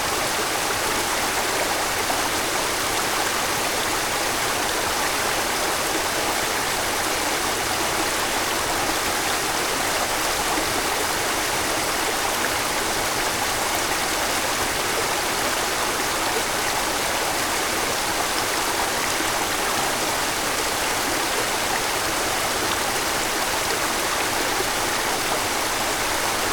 pohorje waterfall from above - pohorje waterfall from the bridge
babbling waters of a stream feeding into the waterfall, recorded from the middle of a small wooden foodbridge